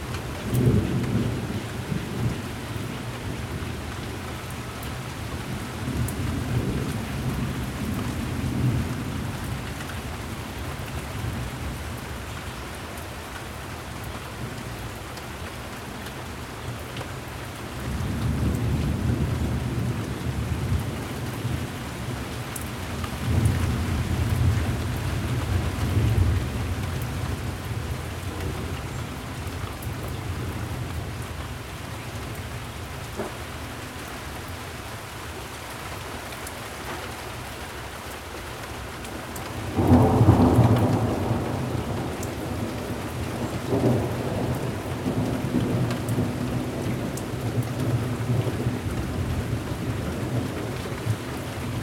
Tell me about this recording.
Another rainstorm during one of the wettest springs on record in the Midwest. Flooding imminent near major rivers.